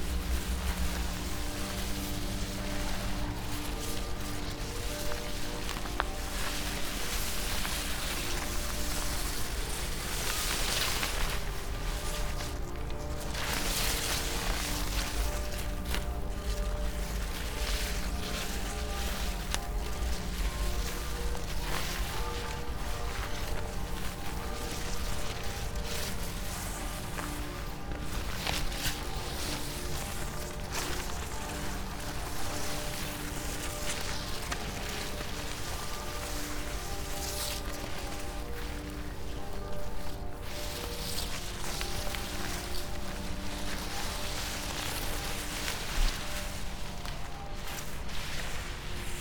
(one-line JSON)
{"title": "path of seasons, vineyard, piramida - walk through overgrown footpath with unfolded scroll book", "date": "2014-06-01 18:46:00", "description": "long strips of paper over high grass ... and, unfortunately, broken snail", "latitude": "46.57", "longitude": "15.65", "altitude": "330", "timezone": "Europe/Ljubljana"}